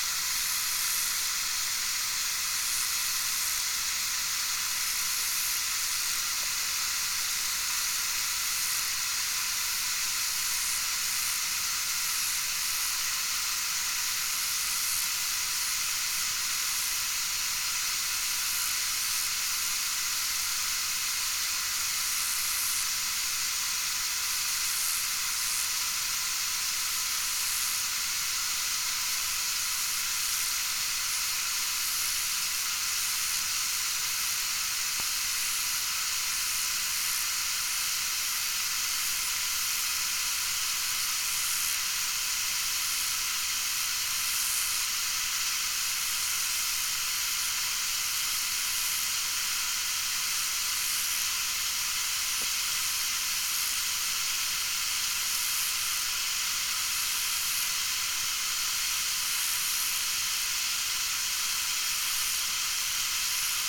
River Drava, Maribor, Slovenia - bridge fountain from underwater
hydrophone recording of underwater life in the river drava, accompanied by fountains that spray water into the river from the old bridge.
14 June 2012, 22:05